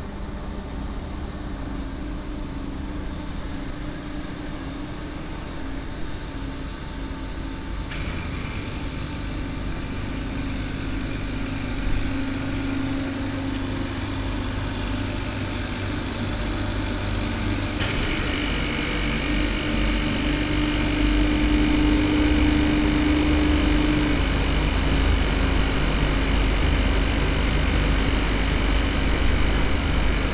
standing close to the outdoor airco system (I think) of the MTV music/radio studio's, a group of young scaters passing by on their way to the ferry.